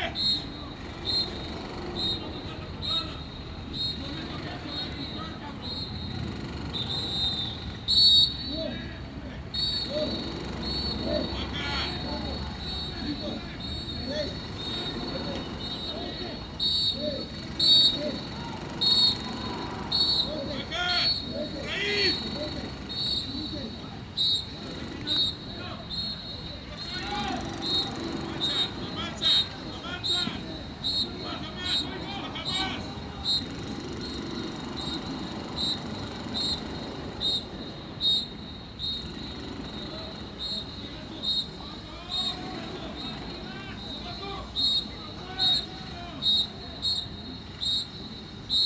Tecnológico de Monterrey Campus Santa Fe, Santa Fe, Ciudad de México, D.F. - Training
Elite private university. College football training and construction work as background noise.
Ciudad de México, D.F., Mexico, 17 June 2015